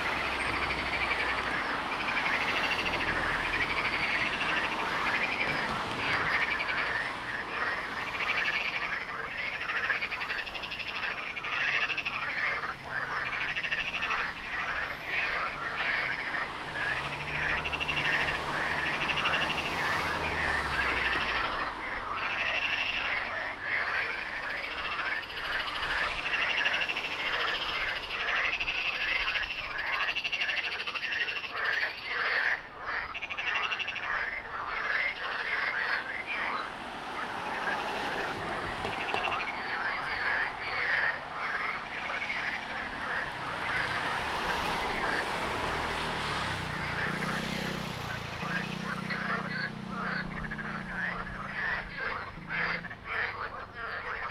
{"title": "Mevoot, Savyon, Israel - Frogs at sunset beside a road", "date": "2020-03-10 18:33:00", "description": "Frogs, cars passing by", "latitude": "32.05", "longitude": "34.87", "altitude": "50", "timezone": "Asia/Jerusalem"}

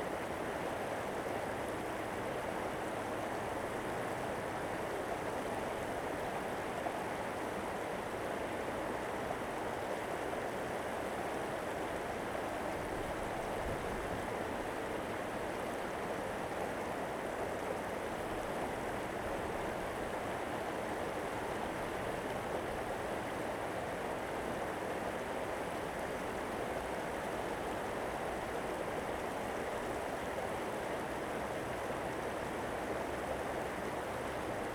{"title": "瑞美村, Rueisuei Township - Stream", "date": "2014-10-08 13:32:00", "description": "On the embankment, Stream, The sound of water\nZoom H2n MS+ XY", "latitude": "23.49", "longitude": "121.38", "altitude": "100", "timezone": "Asia/Taipei"}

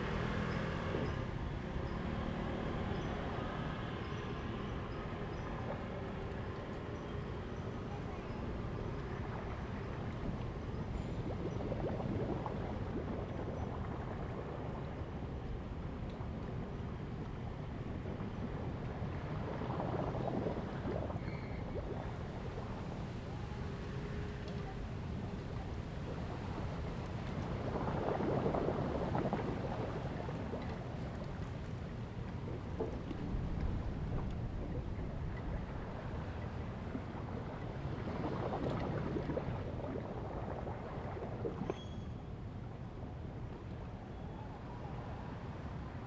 {"title": "淡水河, Tamsui District, New Taipei City - On the river bank", "date": "2015-08-07 18:49:00", "description": "Before typhoon, Sound tide, On the river bank\nZoom H2n MS+XY", "latitude": "25.17", "longitude": "121.44", "altitude": "7", "timezone": "Asia/Taipei"}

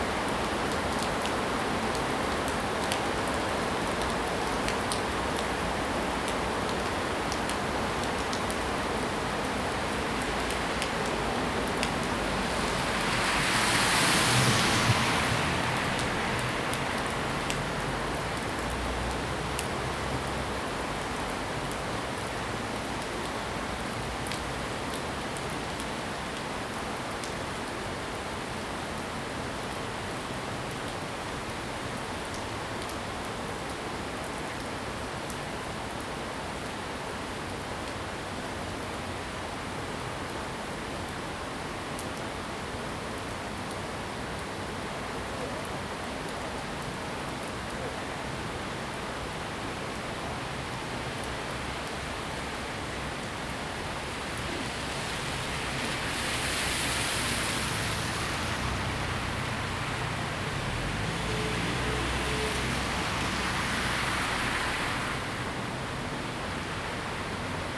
{"title": "綠動奇蹟, 桃米里 Puli Township - Heavy rain", "date": "2015-08-26 17:25:00", "description": "Heavy rain, Traffic Sound\nZoom H2n MS+XY", "latitude": "23.94", "longitude": "120.93", "altitude": "463", "timezone": "Asia/Taipei"}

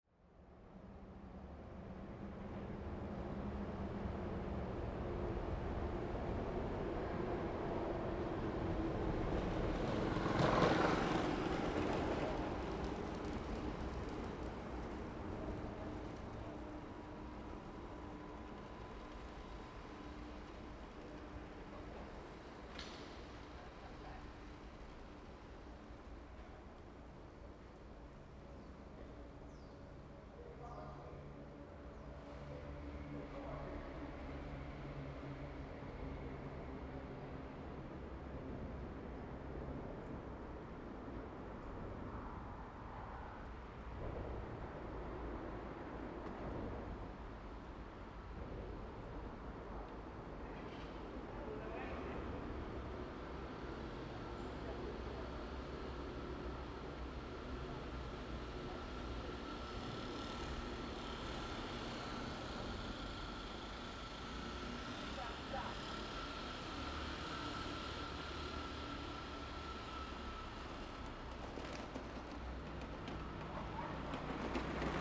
{"title": "縱貫公路, Xiangshan Dist., Hsinchu City - Next to the railroad tracks", "date": "2017-09-15 13:21:00", "description": "Next to the railroad tracks, The train passes by, traffic sound, There is a group of old people playing cards across the tracks, Zoom H2n MS+XY", "latitude": "24.76", "longitude": "120.91", "altitude": "11", "timezone": "Asia/Taipei"}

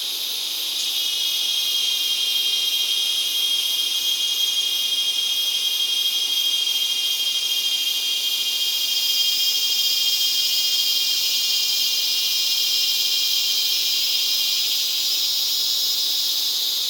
{"title": "Iracambi - twilight", "date": "2017-01-15 19:08:00", "description": "recorded at Iracambi, a NGO dedicated to protect and grow the Atlantic Forest", "latitude": "-20.93", "longitude": "-42.54", "altitude": "814", "timezone": "America/Sao_Paulo"}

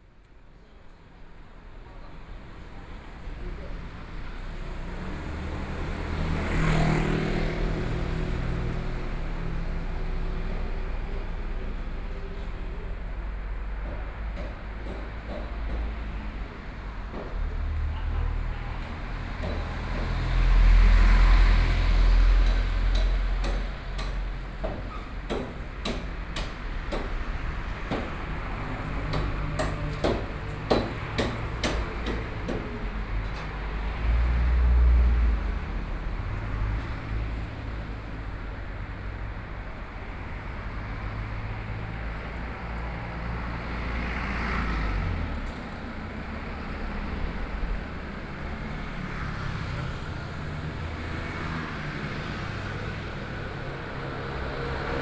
{"title": "Dongshan Township, Yilan County - soundwalk", "date": "2013-11-08 09:40:00", "description": "Walking in the streets of the village, After the traditional market, Binaural recordings, Zoom H4n+ Soundman OKM II", "latitude": "24.63", "longitude": "121.79", "altitude": "11", "timezone": "Asia/Taipei"}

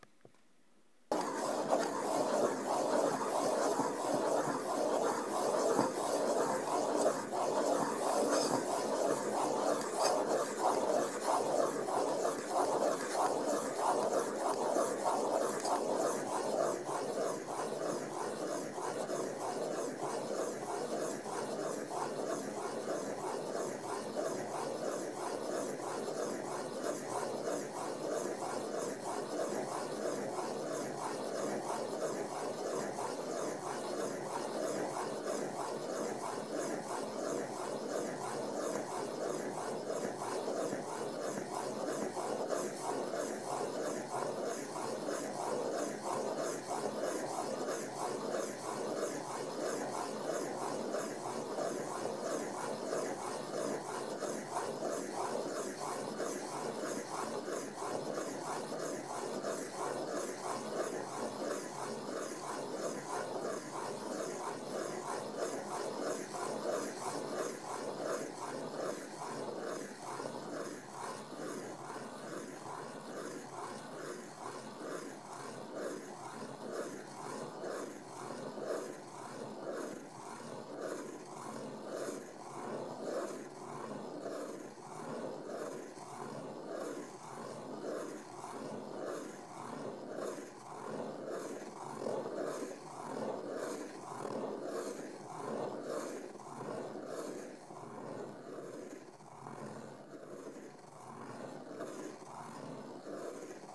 {"title": "Minvaud Upper, Co. Carlow, Ireland - drawing number 14", "date": "2014-11-11 12:43:00", "description": "recording of the making of drawing number 14", "latitude": "52.83", "longitude": "-6.57", "altitude": "159", "timezone": "Europe/Dublin"}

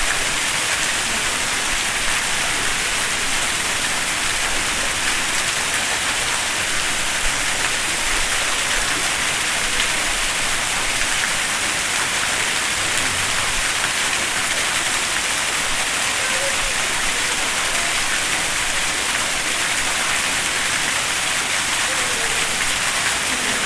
{"title": "Calgary International Airport, Calgary, AB, Canada - Fountain in Arrivals Hall", "date": "2015-12-06 19:30:00", "description": "Fountain in Arrivals Hall. Recorded with Zoom H4N.", "latitude": "51.13", "longitude": "-114.01", "altitude": "1094", "timezone": "America/Edmonton"}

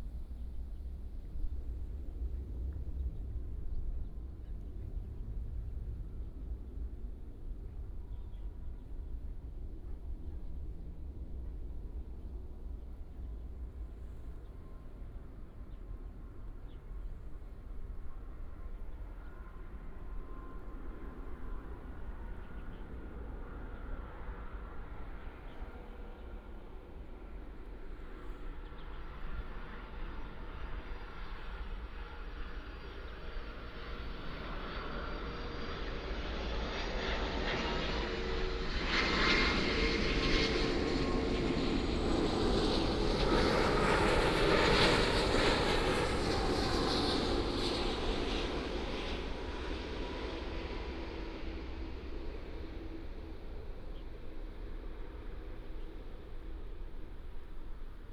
{"title": "Dayuan Dist., Taoyuan City - The plane flew through", "date": "2017-08-18 15:17:00", "description": "near the aircraft runway, Landing, The plane flew through", "latitude": "25.07", "longitude": "121.21", "altitude": "24", "timezone": "Asia/Taipei"}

